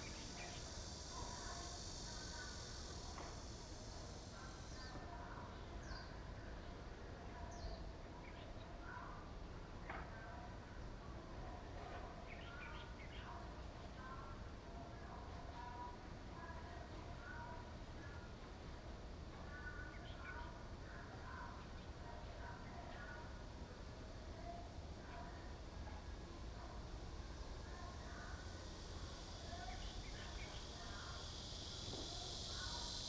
Under the railroad tracks, The train runs through, traffic sound, Dog and bird sounds, Cicada cry
Zoom h2n MS+XY
Taoyuan City, Taiwan, 4 August 2017, ~15:00